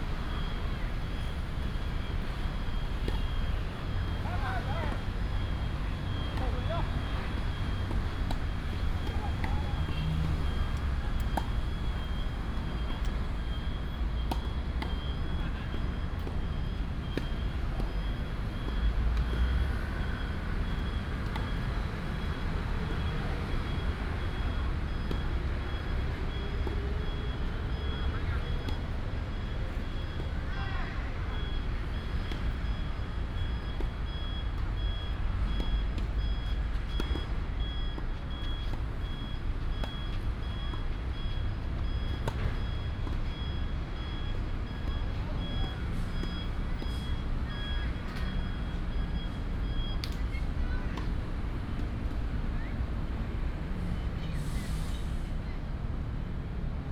東英公園網球場, East Dist., Taichung City - Next to the tennis court
Next to the tennis court, Traffic sound, Binaural recordings, Sony PCM D100+ Soundman OKM II
East District, Taichung City, Taiwan, 1 November 2017, 16:35